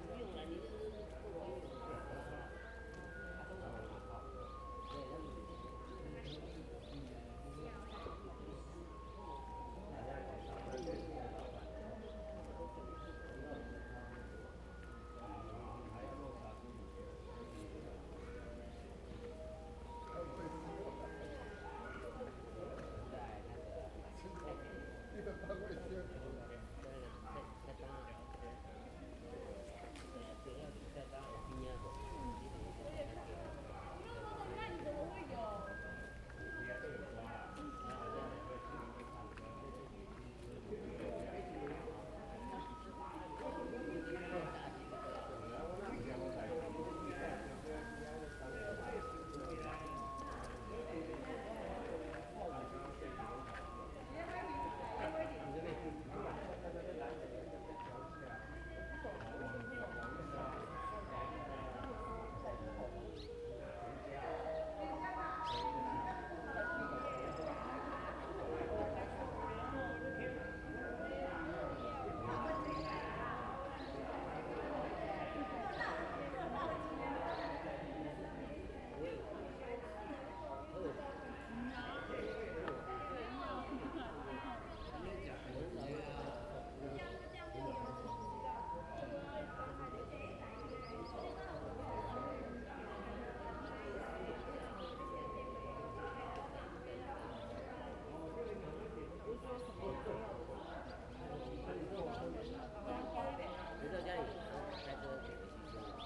In the square in front of the station, Small village, Traffic Sound, Many people gathered in the evening outside the station square
Zoom H2n MS +XY
Taitung County, Taiwan, 5 September 2014